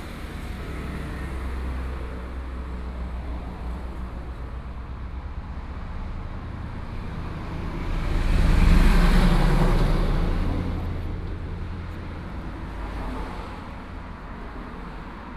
South-Link Highway, Taiwan - Traffic Sound
Traffic Sound, In front of the convenience store, Binaural recordings, Zoom H4n+ Soundman OKM II ( SoundMap20140117- 2)
Taitung City, Taitung County, Taiwan